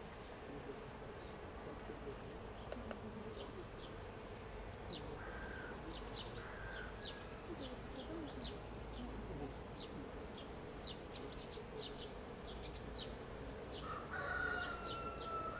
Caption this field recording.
Ukraine / Vinnytsia / project Alley 12,7 / sound #12 / children and bees